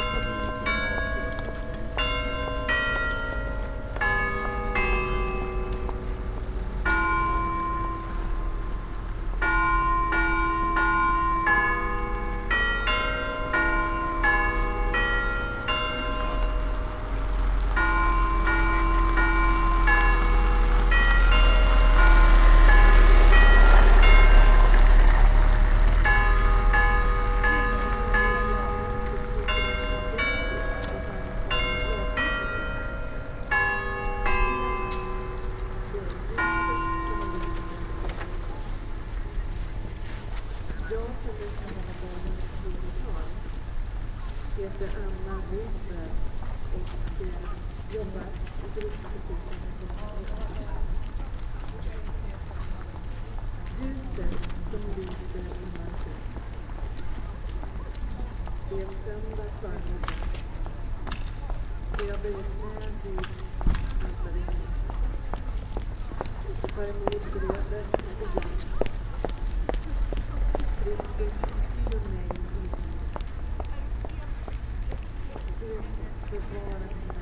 Town Hall. Umeå. Bells and Glashuset
12pm Town Hall bells followed by live event in the Glashuset situated in the main square.
Umeå, Sweden, 10 February 2011, 11:59